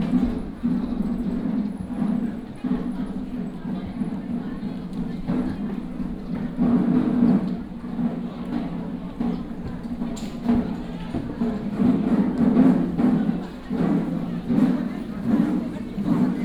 Dalongdong Baoan Temple, Taipei City - temple fair
Walking in the temple, Traffic sound, sound of birds